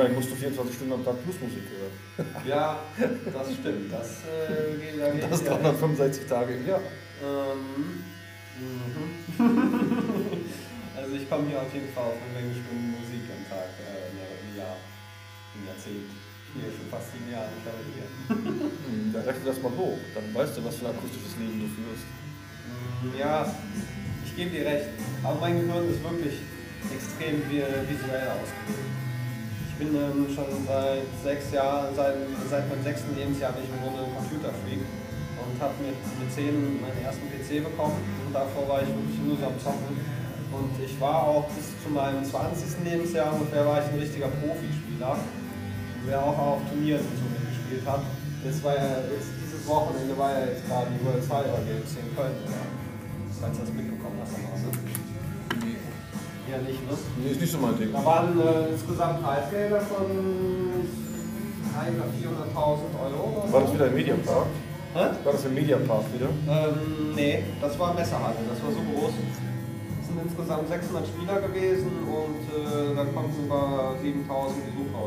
at the hairdressers, cologne
talking about radio aporee at the hairdressers.
recorded nov 11th, 2008.